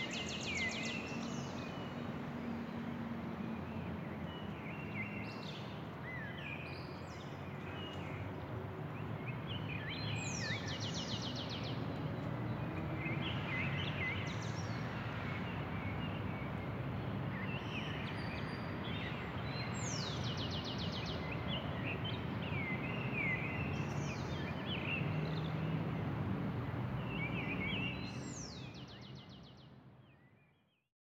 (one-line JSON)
{"title": "Cra., Bogotá, Colombia - Atmosphere Residential Complex Park Mallorca 2, Modelia, Fontibón", "date": "2021-05-18 04:30:00", "description": "4:30 a.m. Mono recording. Early morning atmosphere in a residential neighborhood park surrounded by lots of nature, leafy trees and green areas near a main avenue not very crowded at that time. Landscape with diverse bird songs, soft breeze and in the background sound of vehicles passing by (buses, trucks, motorcycles and cars). No sounds of people exercising or voices, no dogs walking.", "latitude": "4.67", "longitude": "-74.13", "altitude": "2549", "timezone": "America/Bogota"}